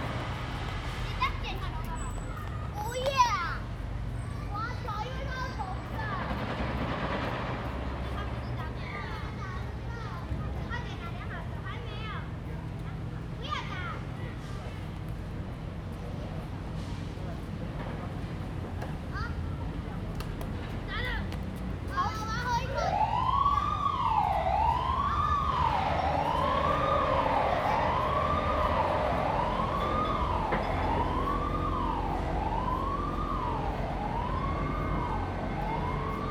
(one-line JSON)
{"title": "四維公園, 板橋區, New Taipei City - in the Park", "date": "2015-08-21 10:41:00", "description": "In the Park, Children Playground, Sound from the construction site\nZoom H2n MS+ XY", "latitude": "25.03", "longitude": "121.46", "altitude": "14", "timezone": "Asia/Taipei"}